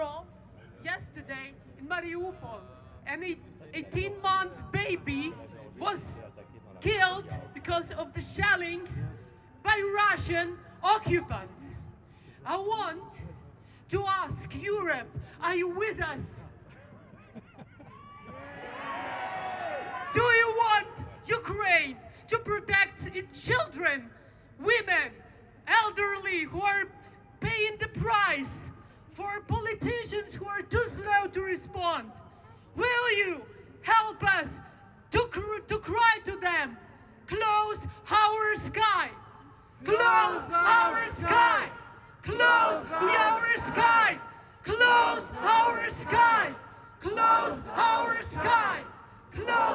Place Jean Rey, Etterbeek, Belgique - Demonstration - speeches for Ukraine
Speeches at the end of the manifestation.
Reverberation from the buildings all around.
Tech Note : Ambeo Smart Headset binaural → iPhone, listen with headphones.